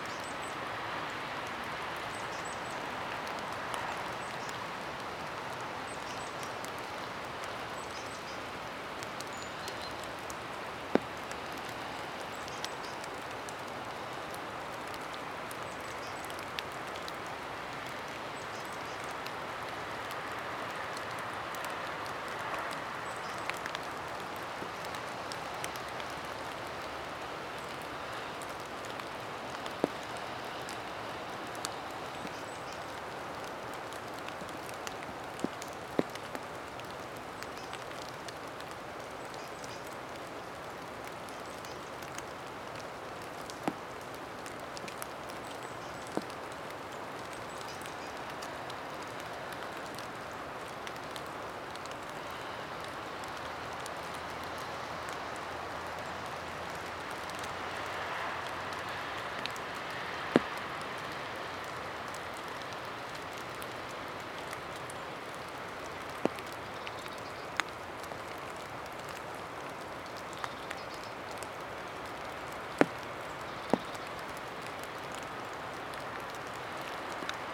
Malmedy, Belgique - Cars, rain and birds
Light rain under an umbrella.
Tech Note : Sony PCM-D100 internal microphones, wide position.
Wallonie, België / Belgique / Belgien, January 2022